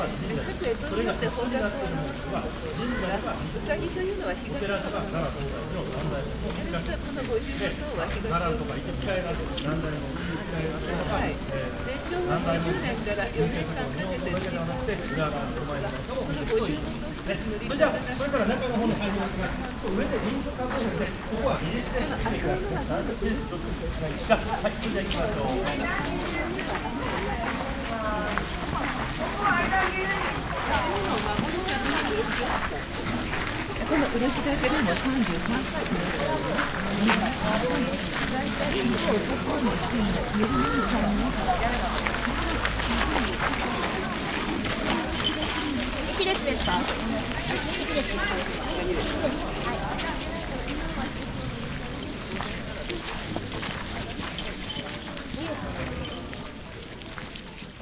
nikkō, tōshō-gu shrine, walkway - nikkō, tōshō-gushrine, walkway
on the walkway to the famous traditional nikkō tōshō-gū shrine, build 1636 - footsteps on the stoney uphill path, two guides explaining the location to japanese visitors
international city scapes and topographic field recordings